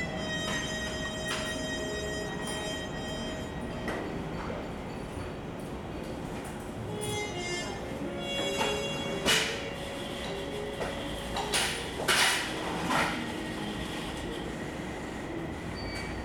Zhengyi N. Rd., Sanchong Dist., New Taipei City - In the restaurant
In the restaurant
Sony Hi-MD MZ-RH1 +Sony ECM-MS907